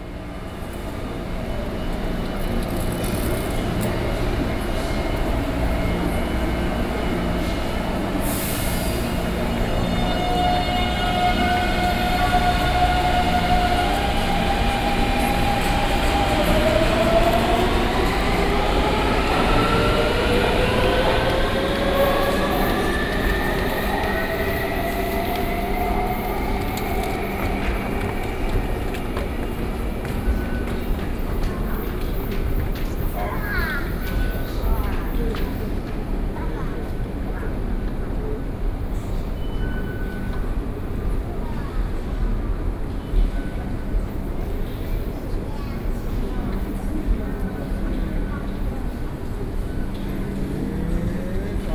Longshan Temple Station, Taipei city - in the MRT station
Taipei City, Taiwan